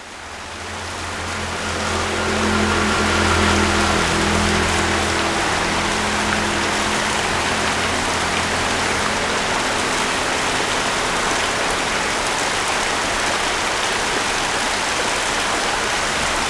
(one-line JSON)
{"title": "硫磺谷遊憩區, Beitou District, Taipei City - Stream", "date": "2012-11-09 05:55:00", "latitude": "25.14", "longitude": "121.52", "altitude": "146", "timezone": "Asia/Taipei"}